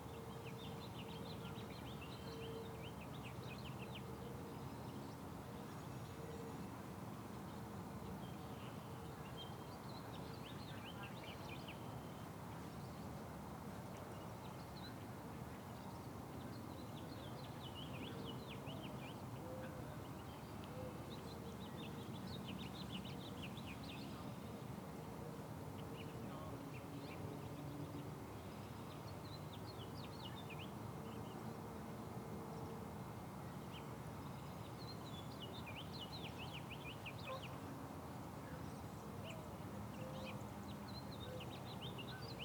Waters Edge - Backyard Sounds 2022-03-17
Backyard sounds on a spring evening. A lot of birds can be heard as well as some aircraft, passing cars, and the neighbor kid on a trampoline.
17 March, 18:03